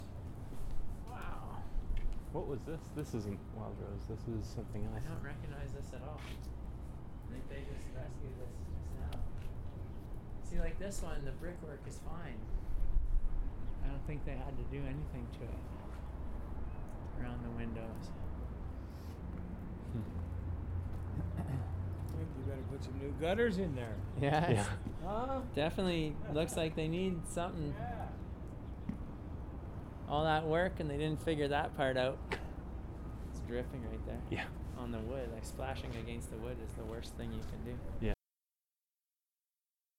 Downtown, Calgary, AB, Canada - Fix the gutters
This is my Village
Tomas Jonsson